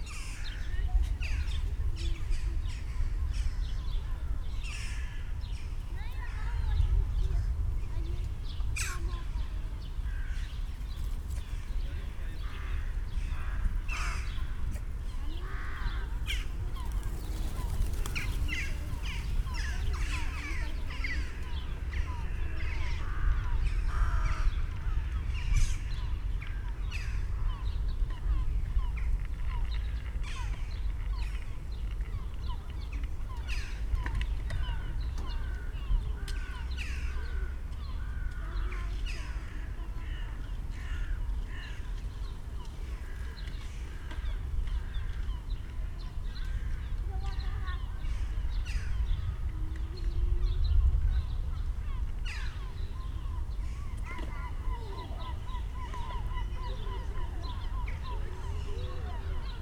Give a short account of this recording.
tallinn, oismae, housing area, ambience, birds, approaching thunderstorm